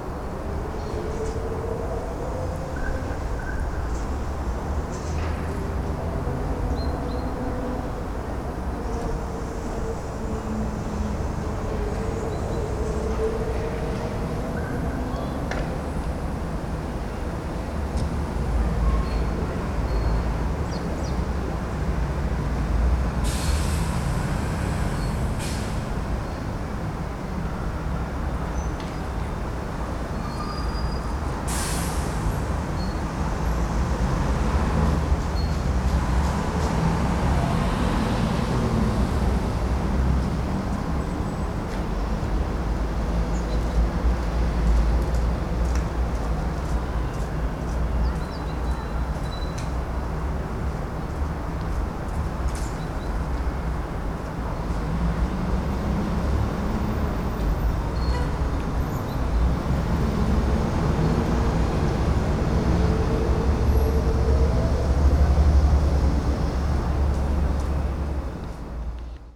Lithuania, Vilnius, birds in citys cacophony
I just wonder how little birdies can live in this machine hell. Recorded at the centre of capital, amongst the pillars of operahouse
10 February 2011, 13:30